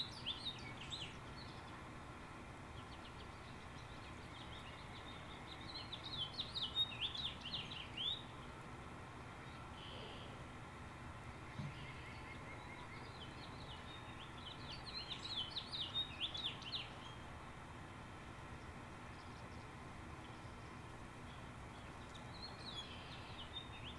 {"title": "Waters Edge - Warning Siren Test and Street Sweeper", "date": "2022-05-04 12:46:00", "description": "On the first Wednesday of every month in the state of Minnesota the outdoor warning sirens are tested at 1pm. This is a recording of one such test. Shortly after the test concludes a street cleaner comes by to clean all the sand from the winter off the streets. This is a true sign of spring", "latitude": "45.18", "longitude": "-93.00", "altitude": "278", "timezone": "America/Chicago"}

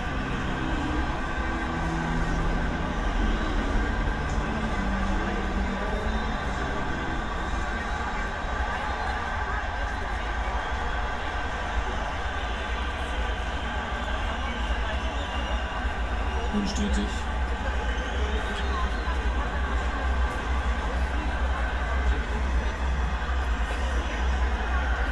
{"title": "berlin, reuterstraße: verkehrsinsel - public events, mixing sounds", "date": "2014-06-21 22:15:00", "description": "mixing sounds of soccer world championship public viewing and a band playing during the fête de la musique, Berlin\n(unedited log of the radio aporee stream, for a live radio session as part of the ongoing exploration of topographic radio practises, iphone 4s, tascam IXY2, primo em 172)", "latitude": "52.49", "longitude": "13.43", "altitude": "43", "timezone": "Europe/Berlin"}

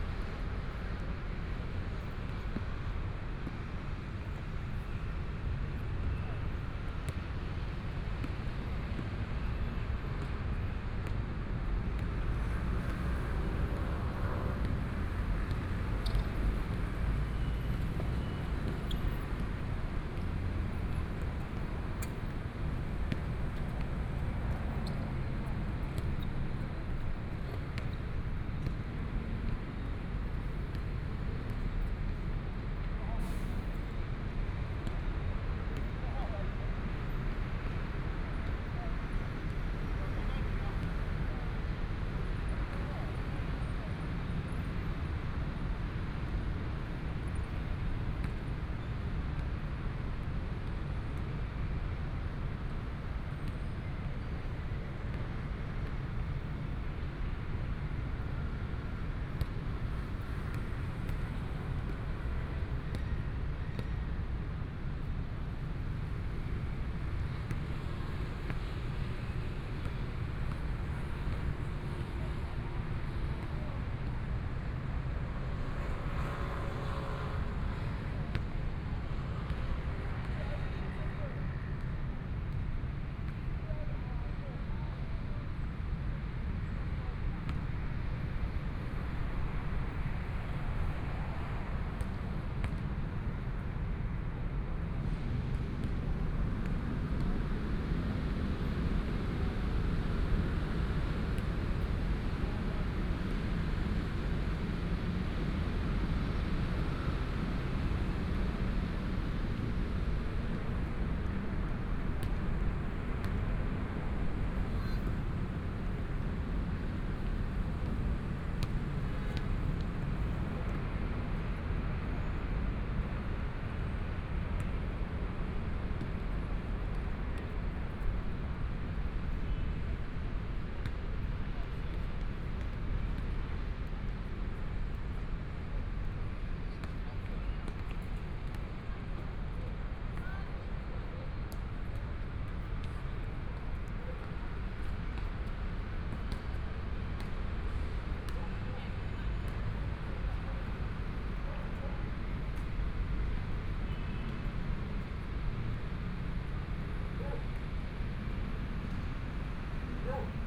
{"title": "Zhongzheng Dist., Taipei City - walking in the Street", "date": "2014-01-21 18:51:00", "description": "soundwalk, Traffic Sound, from Linsen N. Rd., Binaural recordings, Zoom H4n+ Soundman OKM II", "latitude": "25.05", "longitude": "121.53", "timezone": "Asia/Taipei"}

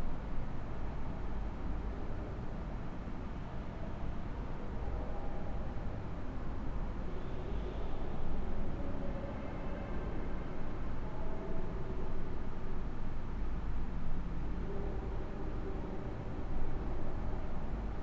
{"title": "5.18 기념공원 Grotto (interior)", "date": "2022-04-29 11:00:00", "description": "A man-made grotto in a half circle shape (having a long curved wall)...this room is a memorial to those killed during the May uprising against military rule in 1980...all surfaces are hard, stone or bronze...this recording spans the time 2 separate groups visited the grotto and the quiet/empty periods surrounding those...", "latitude": "35.16", "longitude": "126.86", "altitude": "37", "timezone": "Asia/Seoul"}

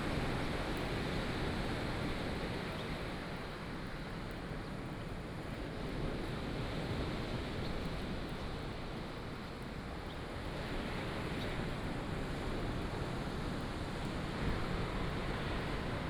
{"title": "上多良部落, Taimali Township - Facing the sea", "date": "2018-04-14 05:46:00", "description": "Road outside the station, Facing the sea, Bird cry, Traffic sound, early morning, Sound of the waves\nBinaural recordings, Sony PCM D100+ Soundman OKM II", "latitude": "22.51", "longitude": "120.96", "altitude": "38", "timezone": "Asia/Taipei"}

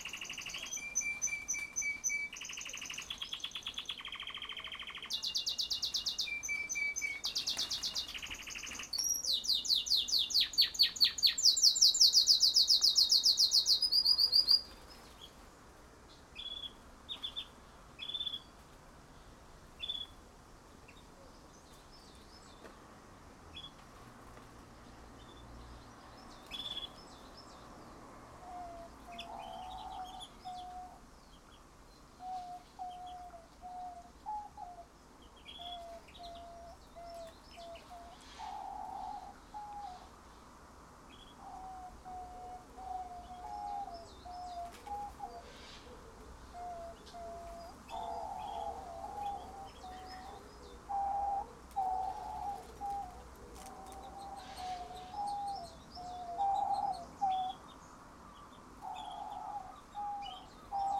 {"title": "Beach Rd, Penarth, UK - small aviary in penarth", "date": "2018-07-10 12:10:00", "description": "small park in penarth containing an aviary. aviary containing a number of canaries. prominent chirping is followed by somewhat dissonant calls, and a brief, distant, rogue seagull.", "latitude": "51.44", "longitude": "-3.17", "altitude": "33", "timezone": "Europe/London"}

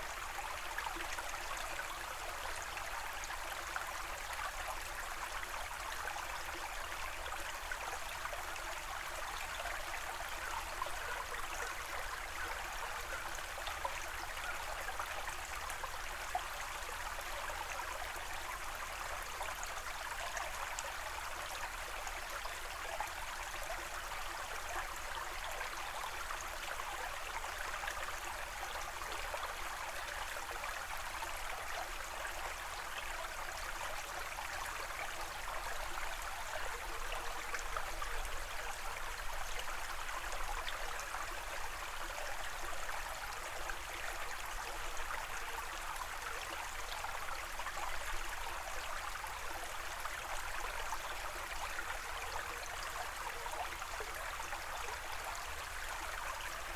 {"title": "Bowen's Creek, Pleasanton Township, MI, USA - Bowen's Creek - February", "date": "2016-02-08 13:55:00", "description": "Bowen's Creek on a Monday afternoon, running westward and freely over twigs and small roots and tree limbs. Recorded about a foot back from creek's edge, approx. three feet above. Snow on the ground. Stereo mic (Audio-Technica, AT-822), recorded via Sony MD (MZ-NF810, pre-amp) and Tascam DR-60DmkII.", "latitude": "44.46", "longitude": "-86.16", "altitude": "232", "timezone": "America/Detroit"}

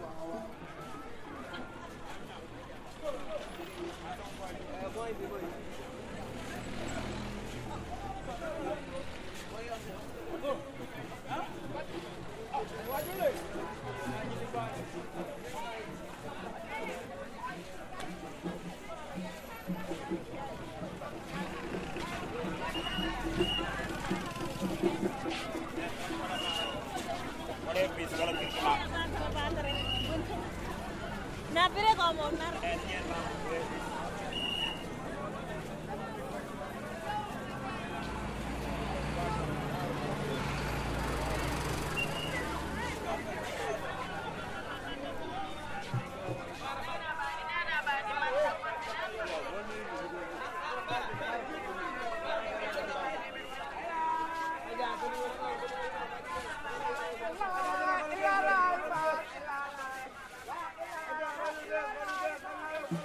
{"date": "2021-05-06 08:56:00", "description": "Created in 1880, Rufisque is a town of history and culture. With its rich architectural heritage, Rufisque\nwas and remains a fishing village.", "latitude": "14.73", "longitude": "-17.29", "altitude": "25", "timezone": "Africa/Dakar"}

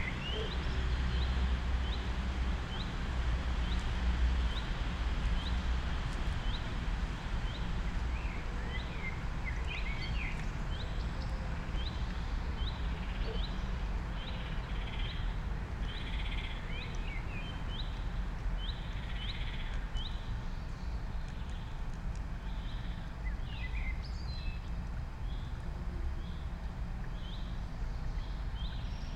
{"title": "Kaliningrad, Russia, at lakes end", "date": "2019-06-08 09:54:00", "latitude": "54.72", "longitude": "20.52", "altitude": "16", "timezone": "Europe/Kaliningrad"}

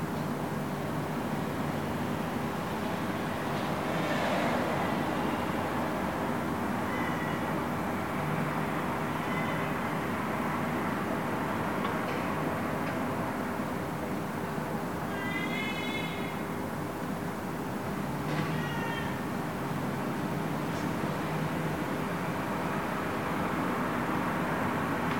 {"title": "ул. Новая, Нижний Новгород, Нижегородская обл., Россия - evening", "date": "2022-07-22 22:07:00", "description": "this sound was recorded by members of the Animation Noise Lab\nevening at the street", "latitude": "56.31", "longitude": "43.99", "altitude": "182", "timezone": "Europe/Moscow"}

